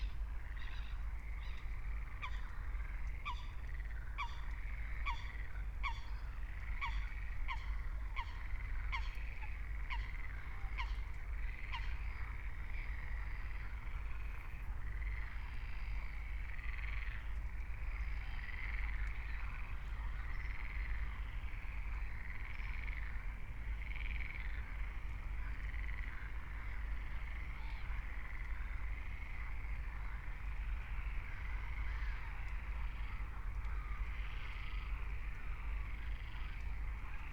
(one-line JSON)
{"date": "2021-06-26 23:50:00", "description": "23:50 Berlin, Buch, Moorlinse - pond, wetland ambience", "latitude": "52.63", "longitude": "13.49", "altitude": "51", "timezone": "Europe/Berlin"}